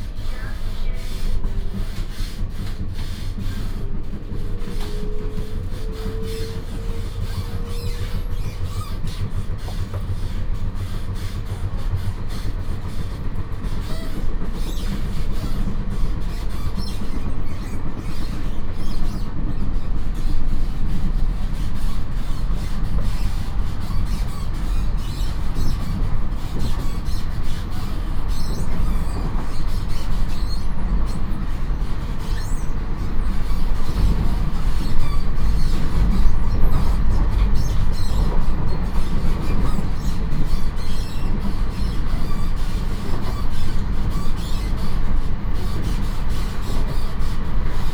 {"title": "Xiangshan District, Hsinchu City - Union train compartment", "date": "2017-01-16 11:25:00", "description": "from Sanxingqiao Station to Xiangshan Station, Union train compartment", "latitude": "24.78", "longitude": "120.92", "altitude": "12", "timezone": "GMT+1"}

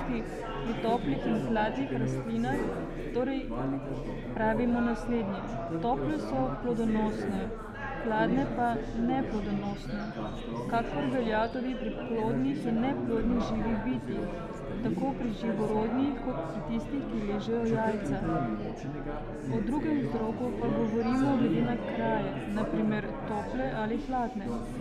{"title": "Secret listening to Eurydice, Celje, Slovenia - Public reading 8", "date": "2013-02-08 18:33:00", "description": "sonic fragment from 33m34s till 38m07s of one hour performance Secret listening to Eurydice 8 and Public reading 8, at the occasion of exhibition Hanging Gardens by Andreja Džakušič", "latitude": "46.23", "longitude": "15.26", "timezone": "Europe/Ljubljana"}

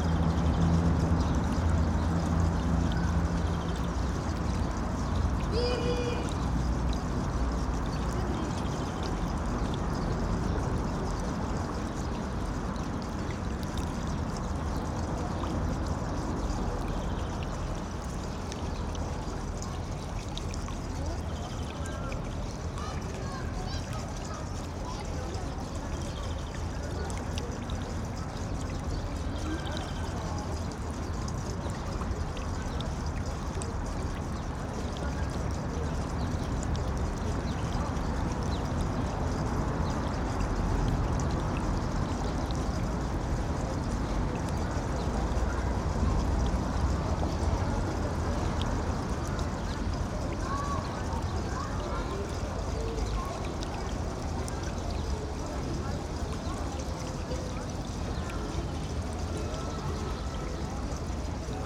{"title": "Prva gimnazija, Maribor, Slovenia - fountain and mandolin", "date": "2012-06-14 17:33:00", "description": "up close at the fountain in the small park facing the prva gimnazija, as some skaters sitting under a nearby tree strummed a few chords on a mandolin.", "latitude": "46.56", "longitude": "15.65", "altitude": "278", "timezone": "Europe/Ljubljana"}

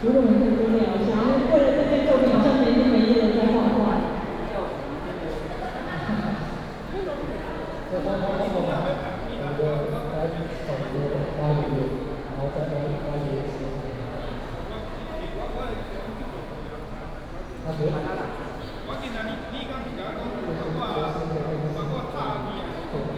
{"title": "New Taipei City Hall, 板橋區, Taiwan - in the City Hall lobby", "date": "2015-09-30 11:17:00", "description": "in the City Hall lobby", "latitude": "25.01", "longitude": "121.47", "altitude": "28", "timezone": "Asia/Taipei"}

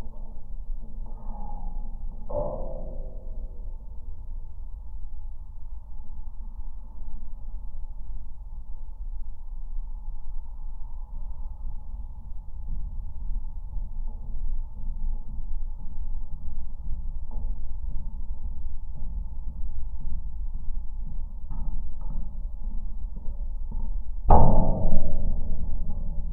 {"title": "Daugavpils, Latvia, pedestrians bridge", "date": "2020-01-26 14:00:00", "description": "new LOM geophone on pedestrians bridge over railway lines", "latitude": "55.88", "longitude": "26.53", "altitude": "96", "timezone": "Europe/Riga"}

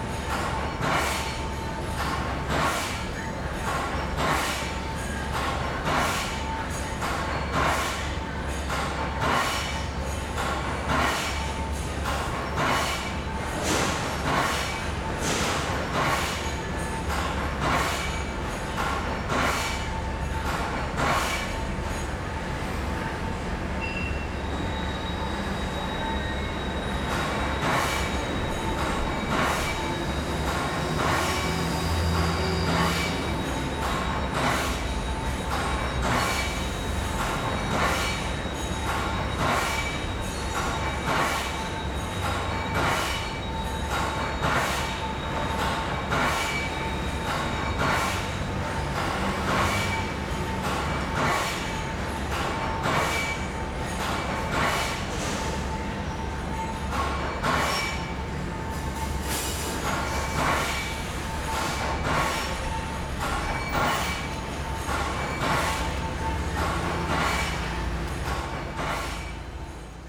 {"title": "Ln., Fuying Rd., Xinzhuang Dist., New Taipei City - the voice of the factory", "date": "2012-01-09 11:44:00", "description": "the voice of the factory, Traffic Sound\nZoom H4n +Rode NT4", "latitude": "25.03", "longitude": "121.43", "altitude": "12", "timezone": "Asia/Taipei"}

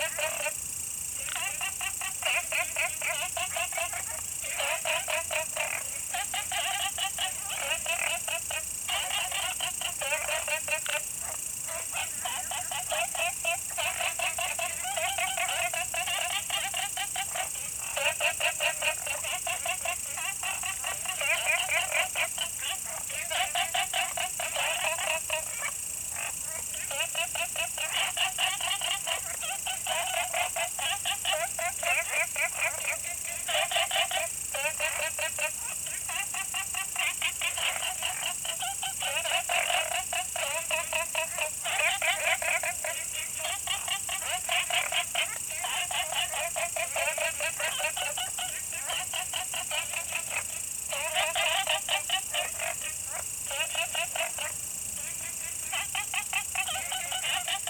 {"title": "青蛙ㄚ婆ㄟ家民宿, Puli Township, Nantou County - Frog calls and Insect sounds", "date": "2015-09-03 20:36:00", "description": "In the bush, Frog calls, Insect sounds\nZoom H2n MS+XY", "latitude": "23.94", "longitude": "120.94", "altitude": "463", "timezone": "Asia/Taipei"}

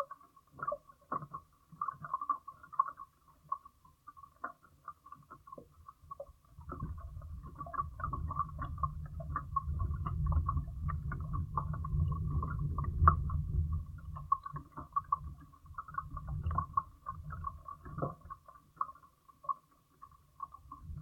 {"title": "Floating Dock, Council Bluff Lake, Missouri, USA - Floating Dock", "date": "2020-11-08 12:42:00", "description": "Contact mic attached to rubber pad lining side of floating dock in Council Bluff Lake. Council Bluff Lake is in Mark Twain National Forest in Iron County, Missouri. The lake was created when the Big River, a tributary of the Meramec River, was dammed.", "latitude": "37.73", "longitude": "-90.93", "altitude": "333", "timezone": "America/Chicago"}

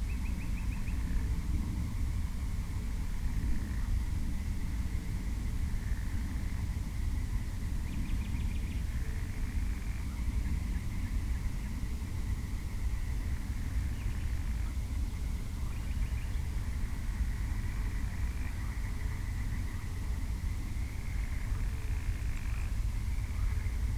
{"title": "klaushagen: field - the city, the country & me: nightly field ambience", "date": "2017-05-26 23:38:00", "description": "nightly field ambience, frogs and/or toads, horses, nithingale and other animals, music in the distance\nthe city, the country & me: may 26, 2017", "latitude": "53.23", "longitude": "13.58", "altitude": "106", "timezone": "Europe/Berlin"}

